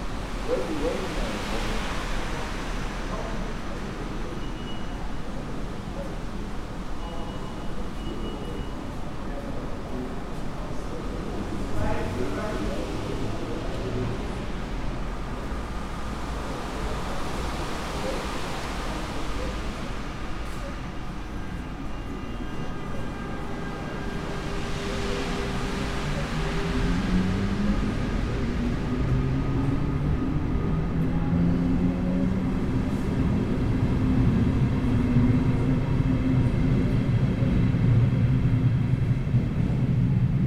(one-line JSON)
{"title": "Zürich West, Schweiz - Bahnhof Hardbrücke, Gleis 2", "date": "2014-12-30 21:29:00", "description": "Bahnhof Hardbrücke, Zürich, Gleis 2", "latitude": "47.39", "longitude": "8.52", "altitude": "409", "timezone": "Europe/Zurich"}